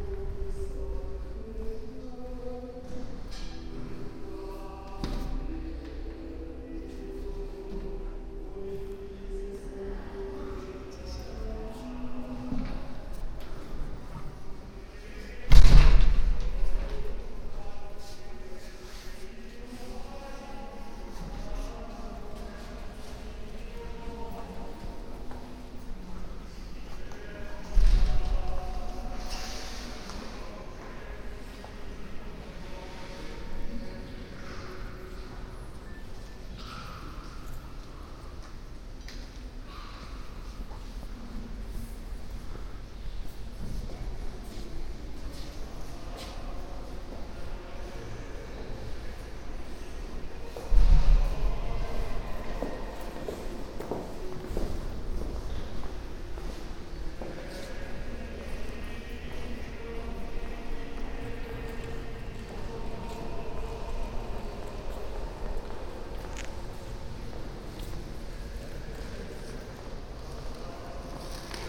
luxembourg, cathedral notre dame
Inside the cathedral Notre Dame. The sound of monk singing and steps of visitors and banging of the doors.
international city scapes - topographic field recordings and social ambiences
17 November 2011, 13:53